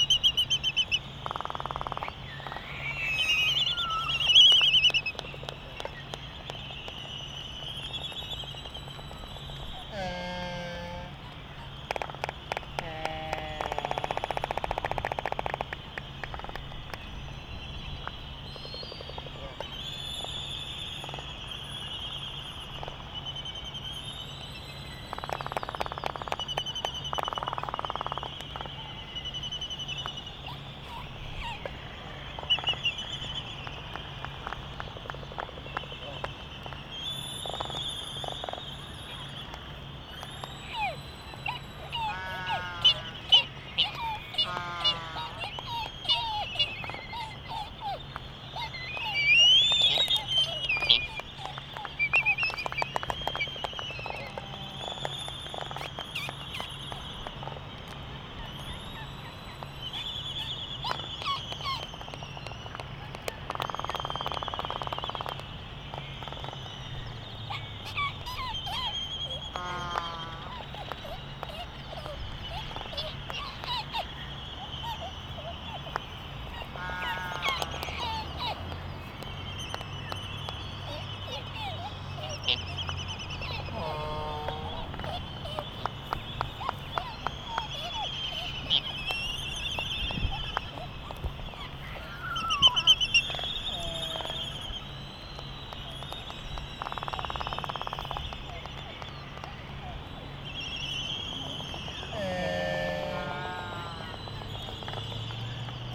Laysan albatross dancing ... Sand Island ... Midway Atoll ... calls and bill clapperings ... white terns ... black noddy ... canaries ... open Sony ECM 959 one point stereo mic to Sony Minidisk ... warm ... sunny ... blustery morning ...